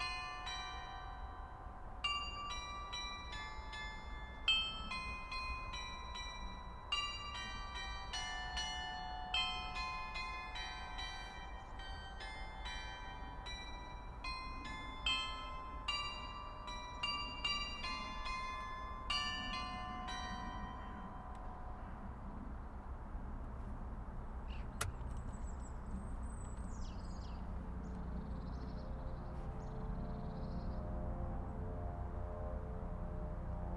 Eil, Köln, Deutschland - Glockenspiel des Gestüts Röttgen / Carillon of the stud Röttgen
Das Glockenspiel des Gestüts Röttgen. Es befindet sich etwa 70 m südöstlich vom Aufnahmepunkt. Das Gestüt liegt in der Einflugschneise des Flughafens Köln-Bonn. Im Hintergrund sind die Fahrzeuge der nahen Theodor-Heuss-Straße zu hören. Zwischen den vier Teilen des Glockenspiels sind Flugzeuge und Vögel zu hören. (Kennt jemand die erste Melodie nach dem Stundenläuten?)
The carillon of the stud Röttgen. It is located approximately 70 m southeast of the pick-up point. The stud is under the flight path of the airport Cologne-Bonn. In the background, the vehicles of the near-Theodor-Heuss-Straße can be heard. Between the four parts of the carillon aircrafts and birds could be heard. (Does anyone know the first tune after the hour striking?)